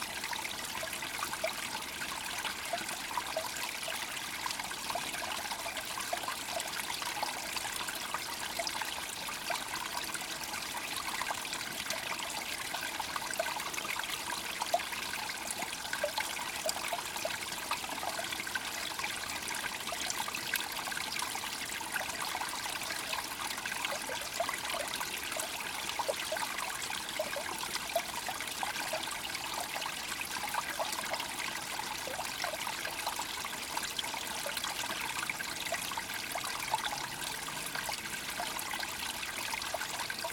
Owl Creek, Queeny Park, Town and Country, Missouri, USA - Owl Creek Cascade
Recording from cascade in Owl Creek in Queeny Park
Missouri, United States, 16 August 2022